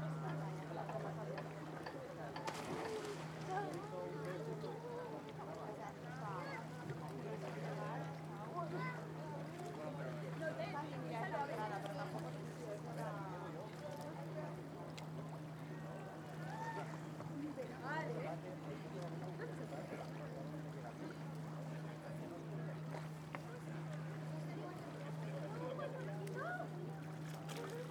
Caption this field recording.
Plage de Caliete - Javea - Espagne, Ambiance. ZOOM F3 + AKG C451B